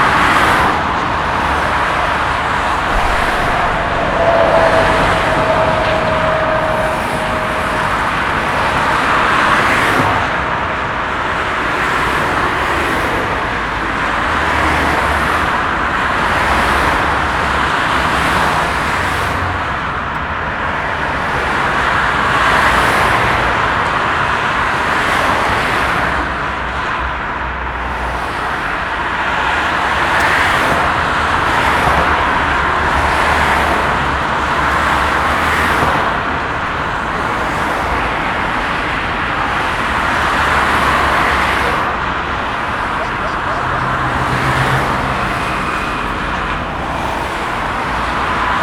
Poteries, Strasbourg, France - Autoroute A351
enregistrement réalisé par l'atelier périscolaire SON de la maille Jacqueline (par Imen et Aliyah)
24 March 2014